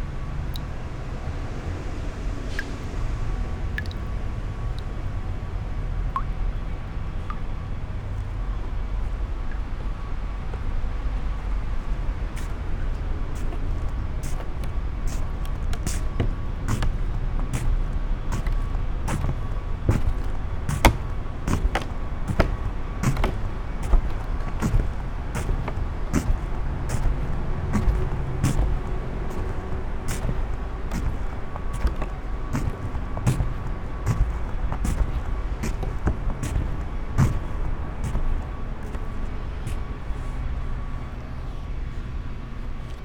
chōzubachi, garden of tomoe, kyoto - water drops, slippers on wooden floor
zen gardens sonority, veranda, steps